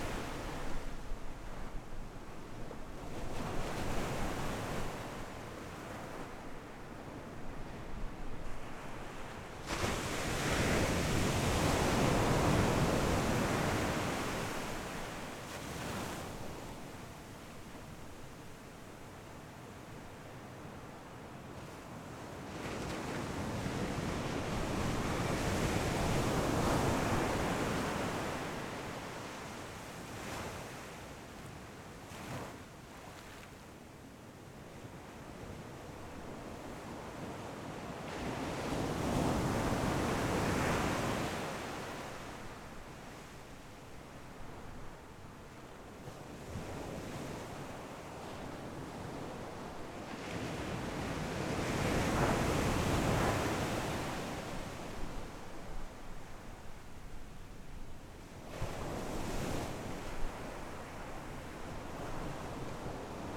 Sound of the waves, Very hot weather, Small port
Zoom H6 XY
馬祖列島 (Lienchiang), 福建省 (Fujian), Mainland - Taiwan Border, 13 October